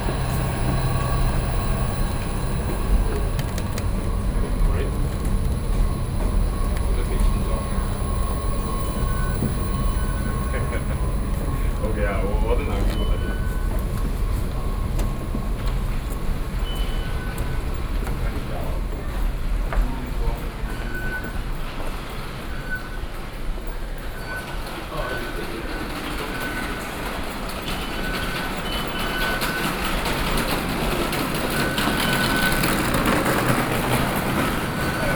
Xinzhuang Station, New Taipei City - In the subway station
Xinzhuang District, New Taipei City, Taiwan, 1 November, 20:24